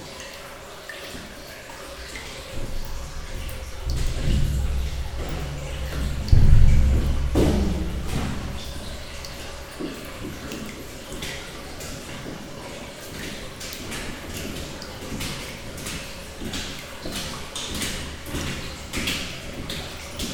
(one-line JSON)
{"title": "Montagnole, France - Walking in the mine", "date": "2017-06-05 17:00:00", "description": "We are walking into the underground abandoned cement mine. In this old tunnel, there's water flowing and a large reverb.", "latitude": "45.53", "longitude": "5.93", "altitude": "669", "timezone": "Europe/Paris"}